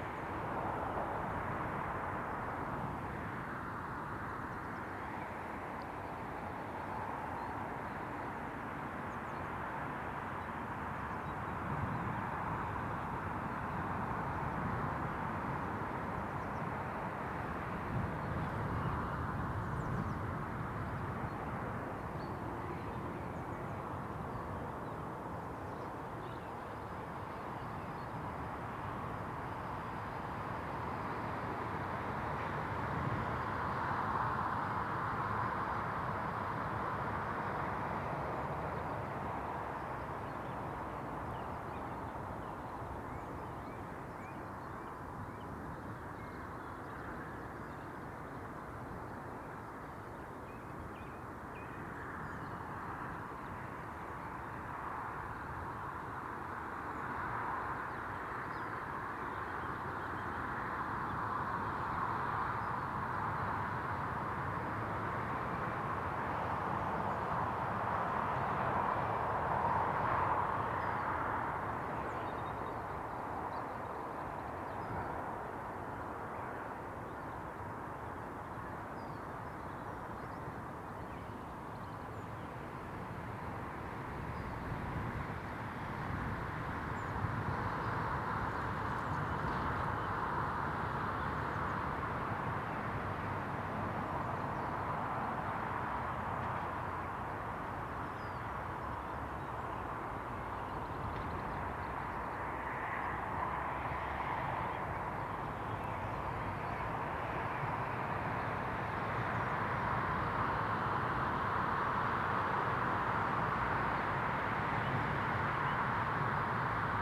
Contención Island Day 62 outer southwest - Walking to the sounds of Contención Island Day 62 Sunday March 7th
The Drive Moor Place Woodlands Woodlands Avenue Westfield Grandstand Road
Down the hill
down the wind
comes the traffic noise
From nowhere
golden plover lift
a skylark
and then the merlin
North East England, England, United Kingdom, 7 March